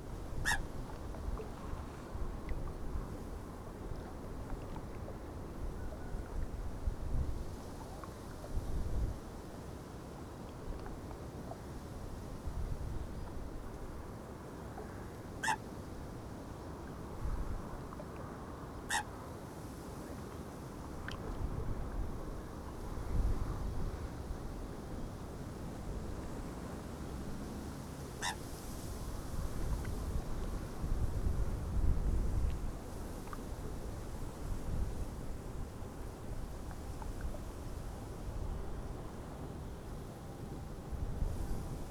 afsluitdijk: mole - the city, the country & me: mole
coot, traffic noise of the motorway in the background
the city, the country & me: july 7, 2001
Breezanddijk, The Netherlands, 6 July 2011